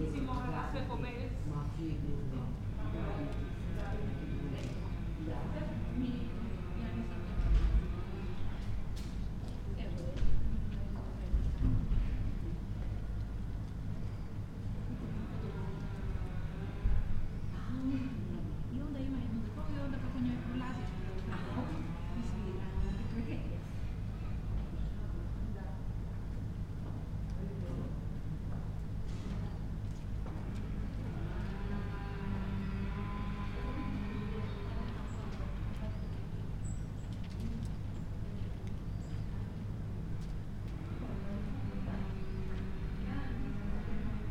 tree with strips, Grožnjan, Croatia - winter
winter sonic ambience in small istrian town, tree with tiny strips all-over tree crown, trunks sawing as typical identifier of the season